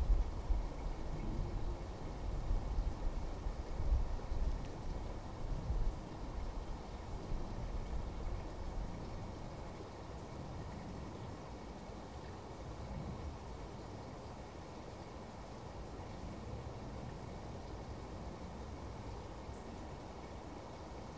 New Forest, Minstead, UK - 036 Wind
Lyndhurst, UK, February 2017